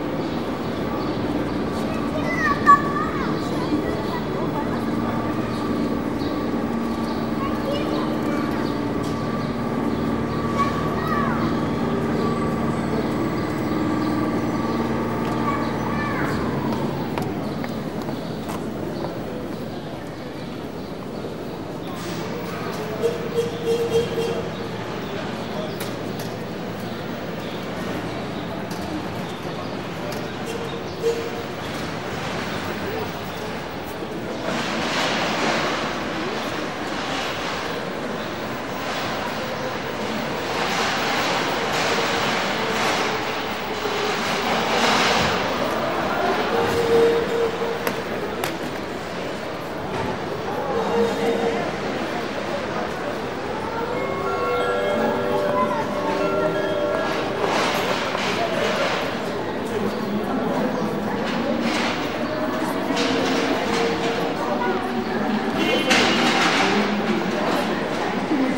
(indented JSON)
{
  "title": "paris, gare d'austerlitz, at ticket barriers",
  "date": "2009-12-12 13:12:00",
  "description": "inside the station at the ticket barriers as a train arrives. announcements, passing steps, voices and station waggons\ninternational cityscapes - social ambiences and topographic field recordings",
  "latitude": "48.84",
  "longitude": "2.36",
  "altitude": "39",
  "timezone": "Europe/Berlin"
}